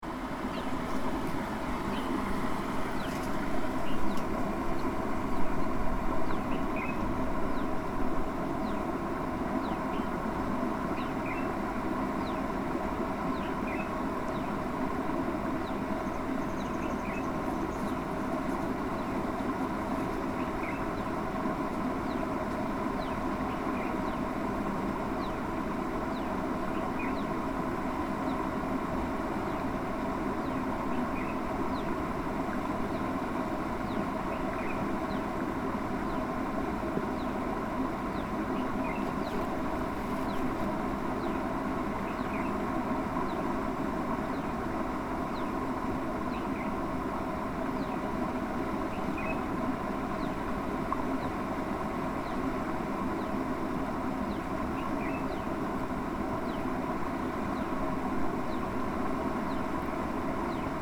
District d'Aurangabad, Maharashtra, Inde - Peaceful Ajanta
Close to the Ajanta 5th century Buddhist caves flows a river.
Maharashtra, India, October 2015